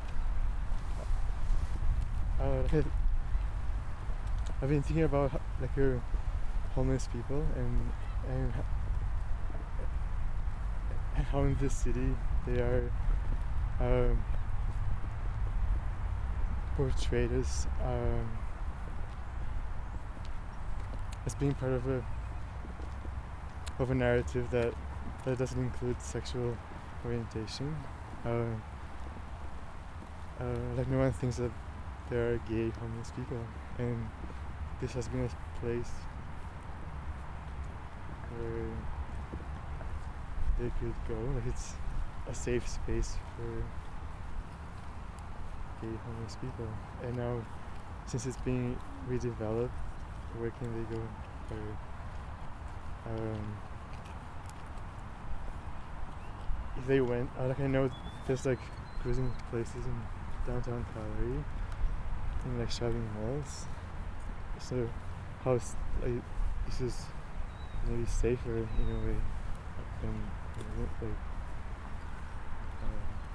Centenary Park, Calgary, AB, Canada - queer spaces
“This is my Village” explores narratives associated with sites and processes of uneven spatial development in the East Village and environs. The recorded conversations consider the historical and future potential of the site, in relation to the larger development of the East Village in the city.